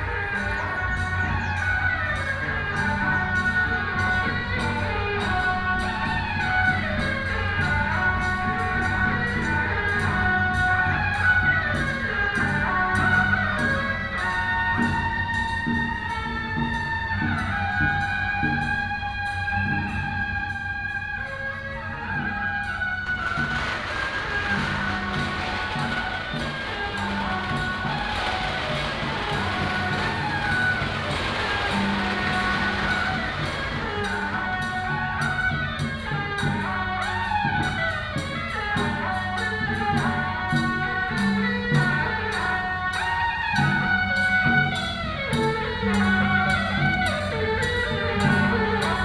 {"title": "Zhongzheng Rd., Tamsui Dist., New Taipei City - temple fair", "date": "2017-04-16 09:55:00", "description": "temple fair, Firecrackers sound", "latitude": "25.17", "longitude": "121.44", "altitude": "16", "timezone": "Asia/Taipei"}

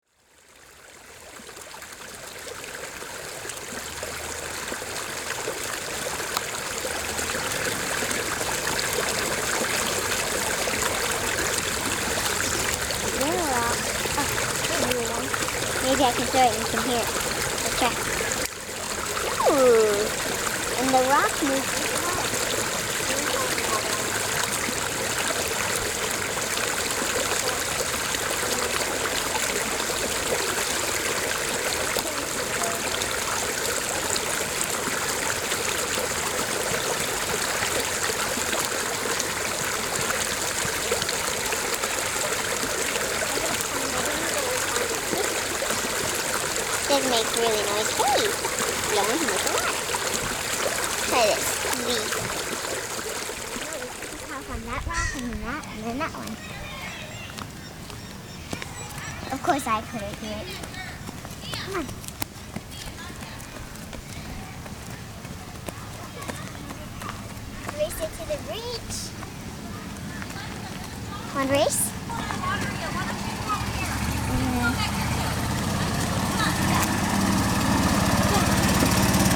{"date": "2010-07-18 03:00:00", "description": "Recorded for World Listening Day 2010 by Kurt Lorenz. Recorded at Lake Wilderness Park, Maple Valley, WA. Featuring Ilaria Lorenz.", "latitude": "47.38", "longitude": "-122.04", "altitude": "142", "timezone": "America/Los_Angeles"}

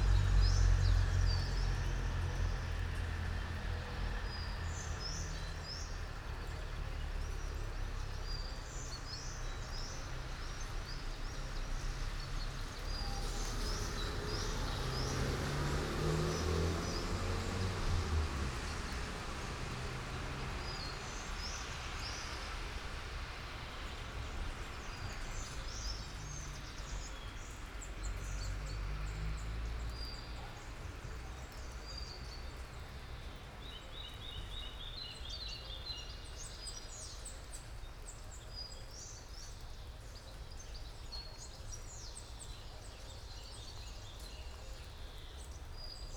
{"title": "all the mornings of the ... - may 2 2013 thu", "date": "2013-05-02 07:44:00", "latitude": "46.56", "longitude": "15.65", "altitude": "285", "timezone": "Europe/Ljubljana"}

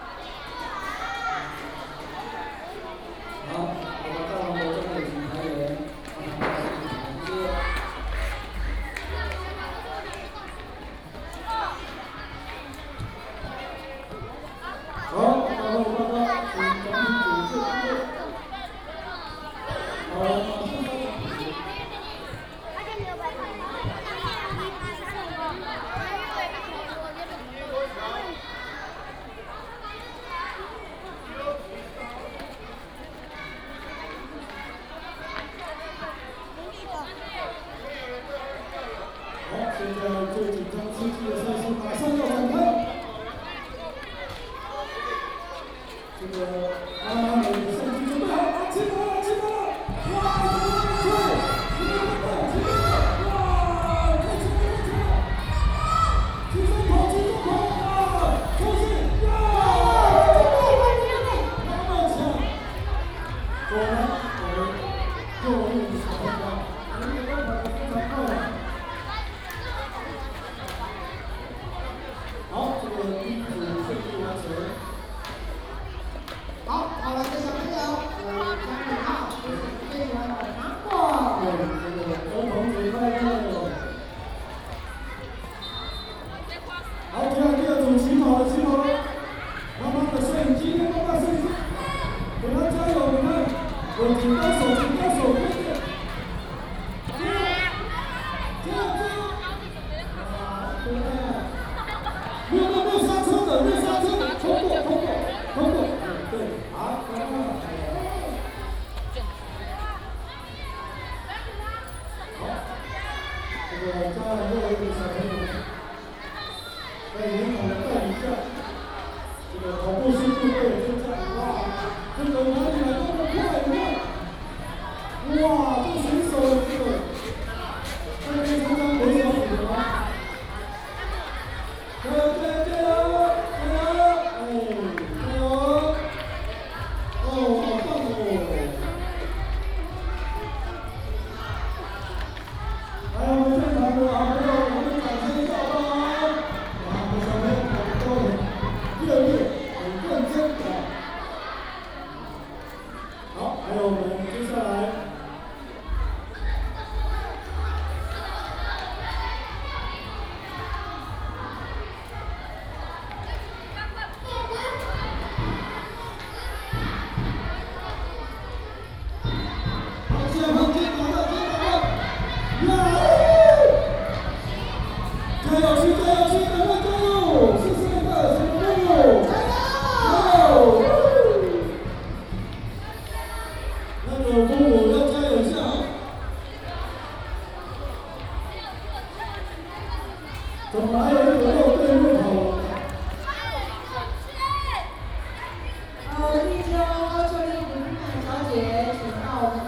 {"title": "介達國小, 台東縣金峰鄉 - sports competition", "date": "2018-04-04 09:33:00", "description": "School and community residents sports competition, Kids play area, many kids", "latitude": "22.60", "longitude": "121.00", "altitude": "49", "timezone": "Asia/Taipei"}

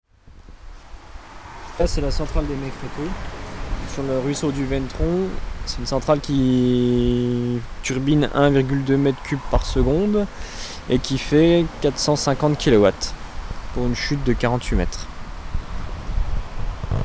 {"title": "GME / Meix-Freiteux - Cornimont, France", "date": "2012-10-19 10:15:00", "description": "La centrale des Meix-Freiteux est implantée sur le Ventron. Elle exploite un débit de 1.5m3/s sous une chute de 40 mètres bruts pour une puissance de 500kW.", "latitude": "47.96", "longitude": "6.82", "altitude": "499", "timezone": "Europe/Paris"}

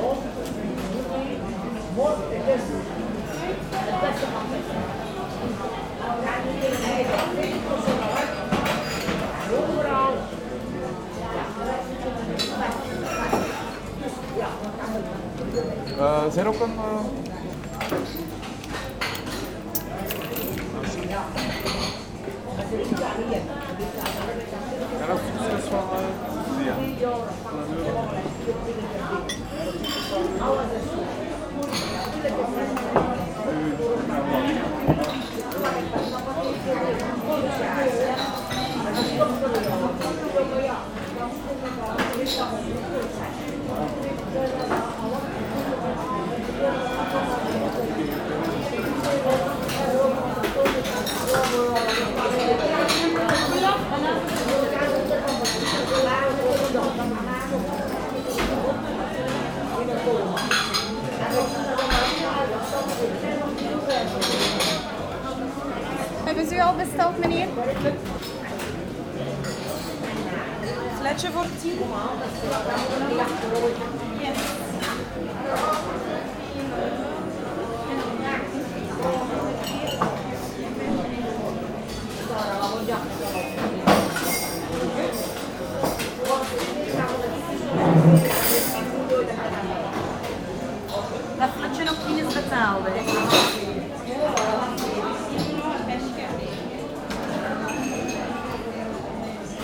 {"title": "Aalst, België - Into the bar", "date": "2019-02-23 11:30:00", "description": "Into the Café Safir. A busy atmosphere, with many elderly people having a good time at lunchtime.", "latitude": "50.94", "longitude": "4.04", "altitude": "14", "timezone": "GMT+1"}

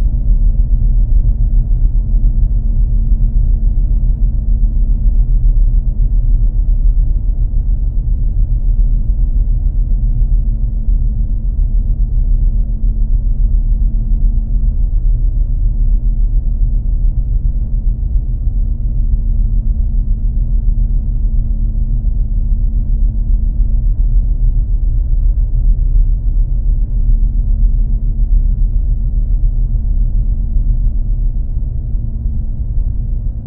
{"title": "Vilnius, Lithuania, factory wall", "date": "2021-05-29 10:50:00", "description": "Geophone on the matallic factory wall", "latitude": "54.68", "longitude": "25.30", "altitude": "103", "timezone": "Europe/Vilnius"}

